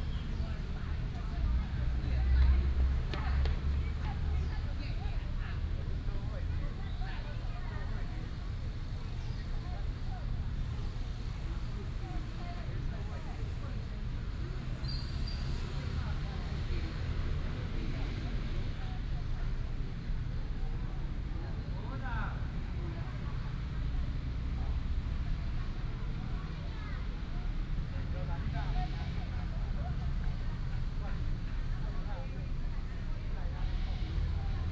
兒二環保公園, Luzhu Dist., Taoyuan City - in the Park
in the Park, Old man and child, Footsteps, traffic sound